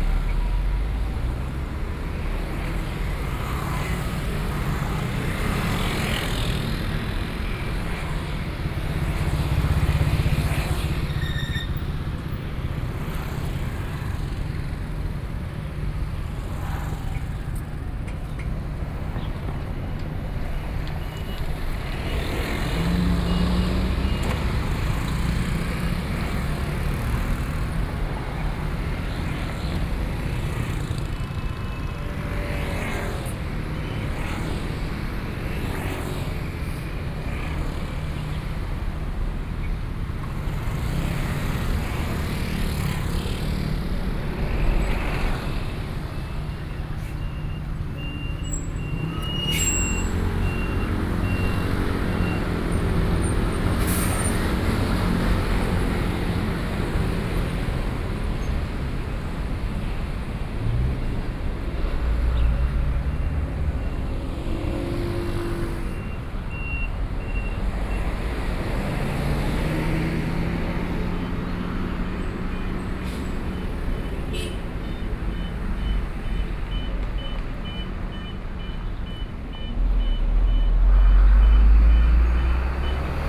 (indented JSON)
{
  "title": "Zhongshan S. Rd., Taipei City - soundwalk",
  "date": "2013-10-15 11:25:00",
  "description": "walking in the street, There are protest marches distant sound, Traffic Noise, Binaural recordings, Sony PCM D50 + Soundman OKM II",
  "latitude": "25.04",
  "longitude": "121.52",
  "altitude": "11",
  "timezone": "Asia/Taipei"
}